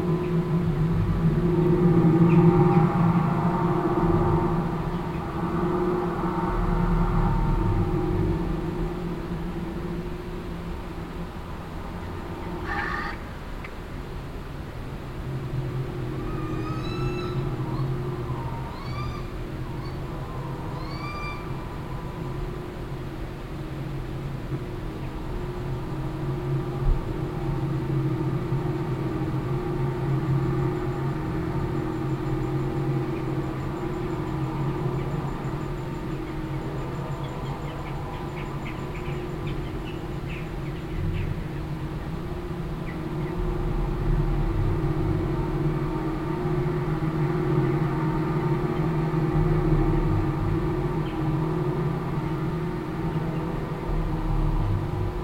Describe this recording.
the installation is part of the project light promenade lippstadt curated by dirk raulf, further informations can be found at: sound installations in public spaces